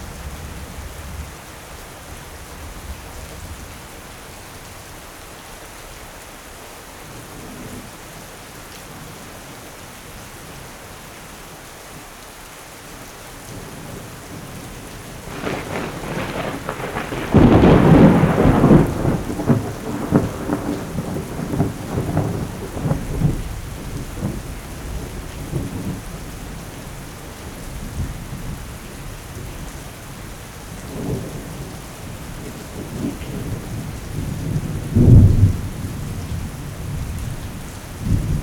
Casa Proal, Paso de Telaya - Thunder and rain during the night
One Hour Thunder & rain during the night in the middle of the bananas trees, in Veracruz.
Recorded by a setup ORTF with 2 Schoeps CCM4
On a Sound Devices Mixpre6 recorder
During a residency at Casa Proal (San Rafael, Veracruz)